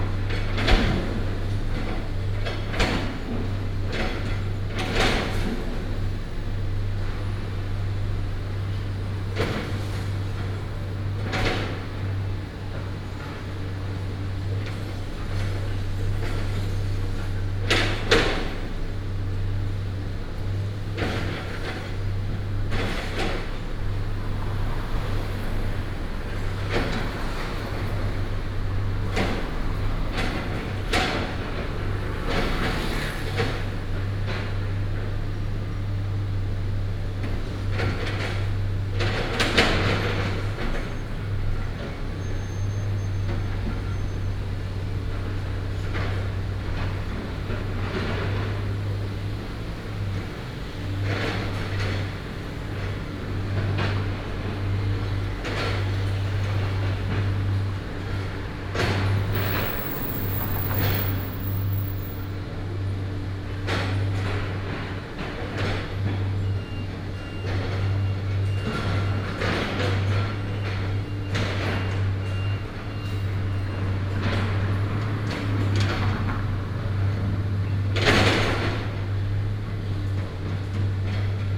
Sec., Changping Rd., Shengang Dist., Taichung City - Dismantle the building
Dismantle the building, traffic sound, Binaural recordings, Sony PCM D100+ Soundman OKM II
Shengang District, Taichung City, Taiwan, September 2017